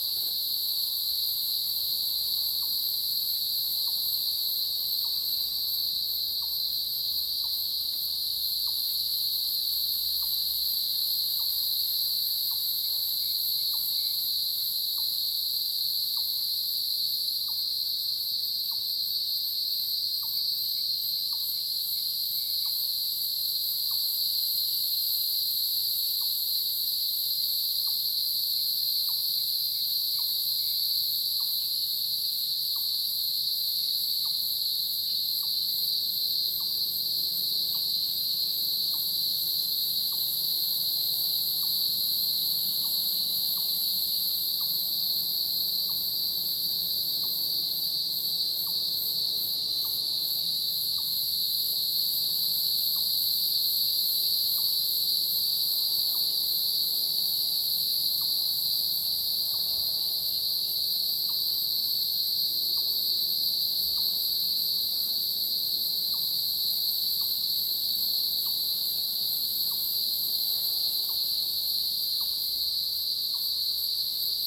投64鄉道, 桃米里Puli Township - in the morning
in the morning, Bird sounds, Cicadas sound
Zoom H2n MS+XY
Nantou County, Puli Township, 水上巷, 8 June